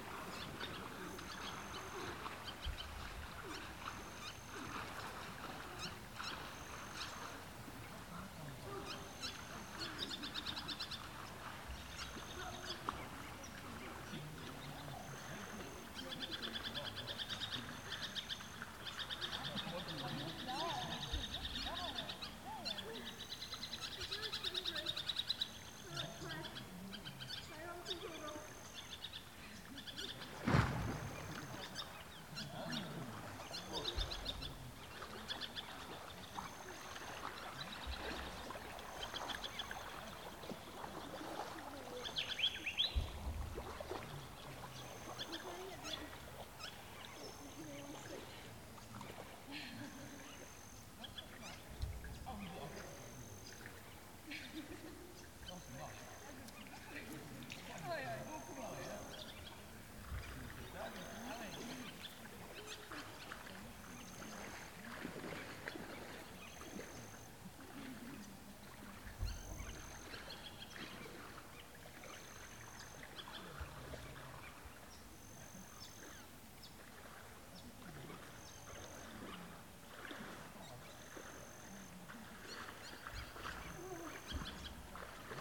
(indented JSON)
{
  "title": "Namatjira NT, Australia - Ellery Creek Big Hole",
  "date": "2015-09-27 15:00:00",
  "description": "Tourists take a dip at the water hole - Recorded with a pair of DPA 4060s, Earthling Designs PSMP-1 custom preamps and an H4n.",
  "latitude": "-23.78",
  "longitude": "133.07",
  "altitude": "672",
  "timezone": "Australia/Darwin"
}